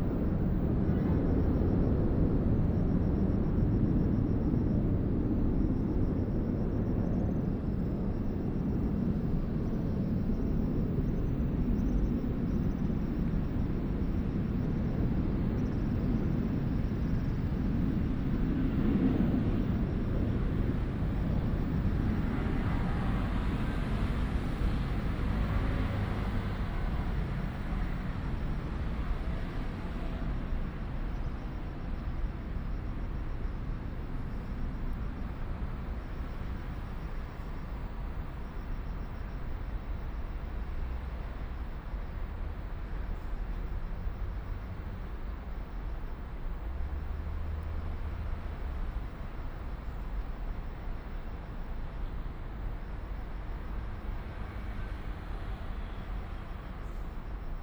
After the demolition of the idle community, Formerly from the Chinese army moved to Taiwans residence, The sound of the plane, Binaural recordings, Sony PCM D100+ Soundman OKM II
East District, Hsinchu City, Taiwan